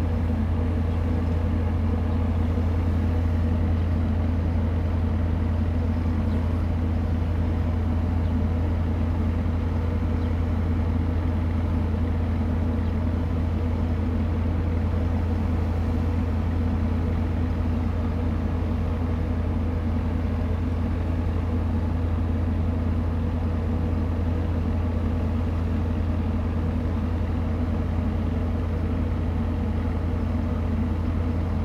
大福漁港, Hsiao Liouciou Island - In the fishing port
In the fishing port, Birds singing
Zoom H2n MS +XY
Pingtung County, Taiwan